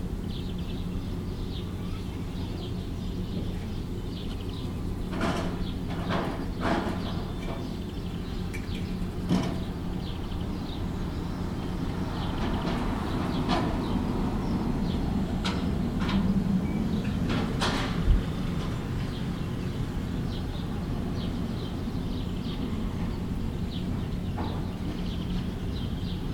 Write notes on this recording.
Dachdecker decken eine Garage mit Blechprofilen, Vögel zwitschern. Roofers cover a garage with heet metal profiles, birds chirping.